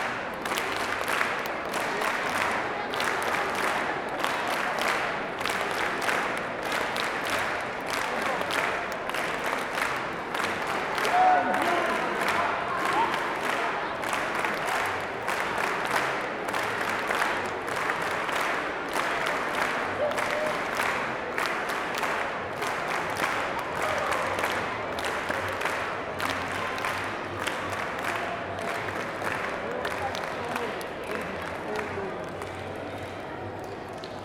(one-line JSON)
{"title": "Cergy, France - Audience before a show [cergy]", "date": "2014-09-12 15:56:00", "description": "Amphithéâtre.Festival \"Cergy Soit!\" 2014 .avant un spectacle, le public\ns'impatiente .\nAmphitheater.During Festival \"Cergy Soit!\" 2014.Audience Before a show.", "latitude": "49.04", "longitude": "2.08", "altitude": "49", "timezone": "Europe/Paris"}